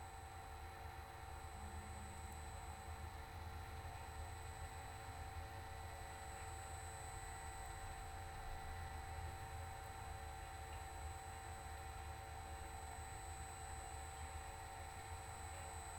{"title": "Utena, Lithuania, study of abandoned lights tower", "date": "2018-07-10 18:50:00", "description": "contact microphones on big metallic light tower. and surrounding electromagnetic field captured by Priezor", "latitude": "55.48", "longitude": "25.57", "altitude": "112", "timezone": "Europe/Vilnius"}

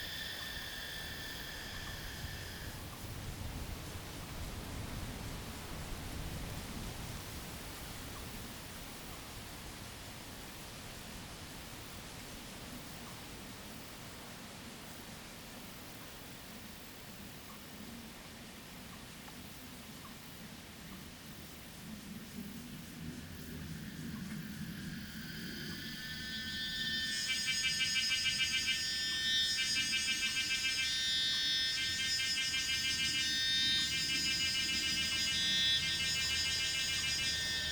{"title": "環湖路一段, Daxi Dist., Taoyuan City - Cicada and bird sound", "date": "2017-08-09 18:05:00", "description": "Cicada and bird sound, Traffic sound\nZoom H2nMS+XY", "latitude": "24.81", "longitude": "121.30", "altitude": "290", "timezone": "Asia/Taipei"}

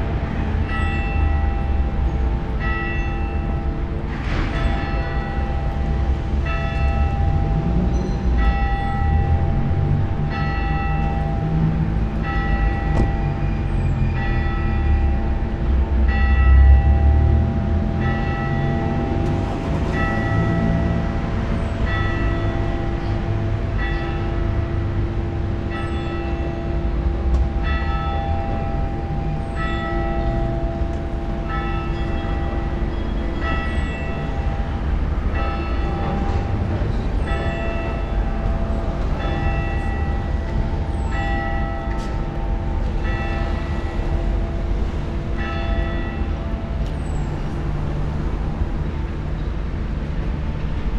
{"date": "2011-06-01 11:00:00", "description": "Brussels, Place Brugmann - ND de lAnnonciation, funeral bells.\nSD-702, Rode NT4", "latitude": "50.82", "longitude": "4.35", "altitude": "94", "timezone": "Europe/Brussels"}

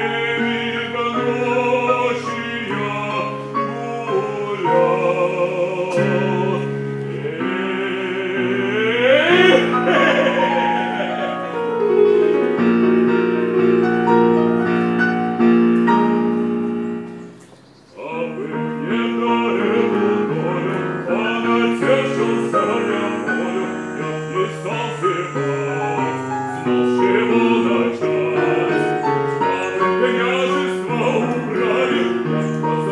{"title": "ул. Короленко, Нижний Новгород, Нижегородская обл., Россия - сhalyapin", "date": "2022-07-22 19:05:00", "description": "this sound was recorded by members of the Animation Noise Lab by zoom h4n\nstreet concert \"chaliapin on the balcony\"", "latitude": "56.31", "longitude": "44.00", "altitude": "178", "timezone": "Europe/Moscow"}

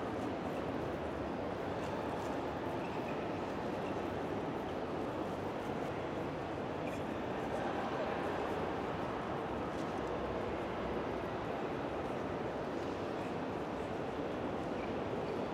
{"title": "Zürich, Hauptbahnhof, Schweiz - Bahnhofshalle", "date": "1998-06-15 08:58:00", "description": "Glockenschlag. Am Schluss ein Männerchor.", "latitude": "47.38", "longitude": "8.54", "altitude": "408", "timezone": "Europe/Zurich"}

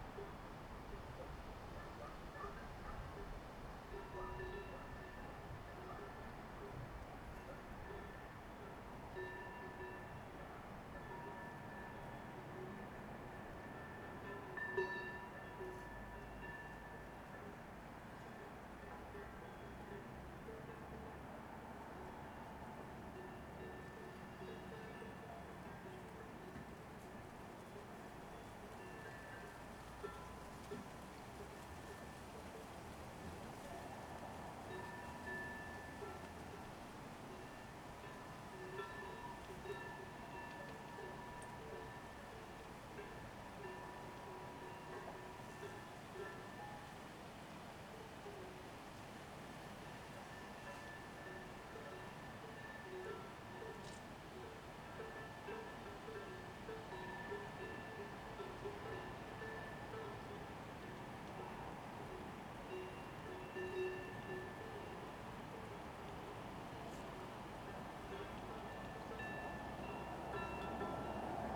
{"title": "Via Maestra, Rorà TO, Italia - Stone Oven House August 29&30 2020 artistic event 3 of 3", "date": "2020-08-30 09:00:00", "description": "Music and contemporary arts at Stone Oven House, Rorà, Italy, Set 3 of 3\nOne little show. Two big artists: Alessandro Sciaraffa and Daniele Galliano. 29 August.\nSet 3 of 3: Saturday, August 30th, h.9:00 a.m.", "latitude": "44.79", "longitude": "7.20", "altitude": "893", "timezone": "Europe/Rome"}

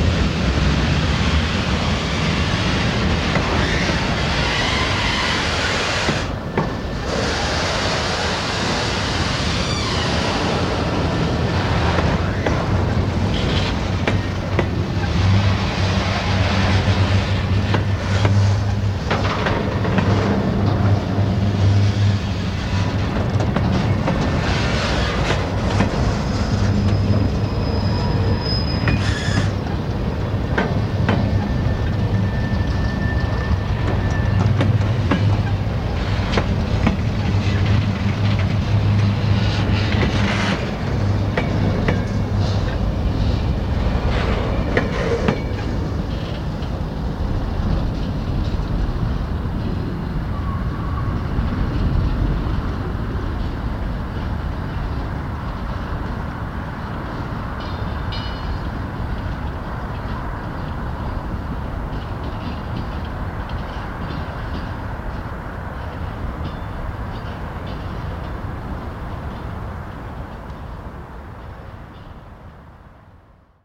{"title": "Burnet Rd, Austin, TX, USA - Freight Train", "date": "1993-11-22 16:00:00", "description": "slowly passing freight train with empty bulk containers\nAiwa HS-JS315 Cassette Recorder", "latitude": "30.40", "longitude": "-97.71", "altitude": "226", "timezone": "America/Chicago"}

